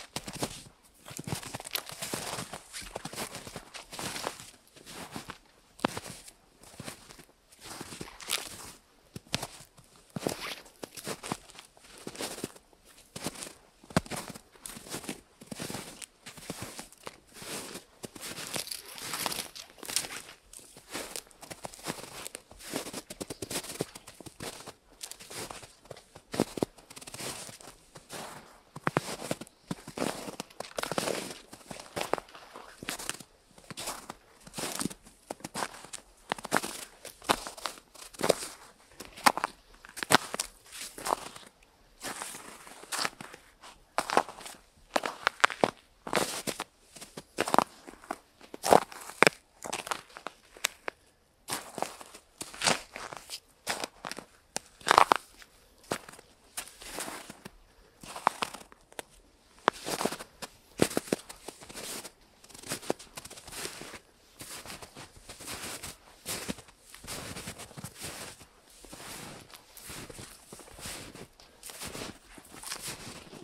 Walter-von-Selve-Straße, Hameln, Germany WALKING ON THE SNOW (In between Trees in The Small Wood) - WALKING ON THE SNOW (In between Trees in The Small Wood)
In February 2021, when it was enough of snow (after many days of snowing), it was a nice sunny winter day and I made this recording in the smaller wood in between trees close to the Weser river. The atmosphere was very calm and not so many other sounds were present at the moment. I recorded the walking through this small wood in between trees.
Zoom H3-VR Ambisonics Microphone